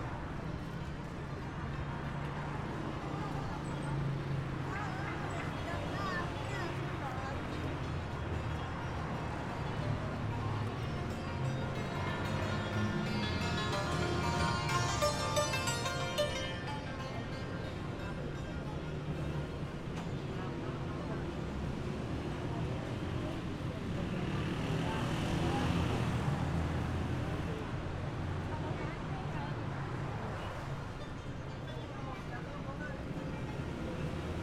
Tehran Province, Tehran, Enghelab St and Valiasr Street، Valiasr, Iran - Street musician playing Santur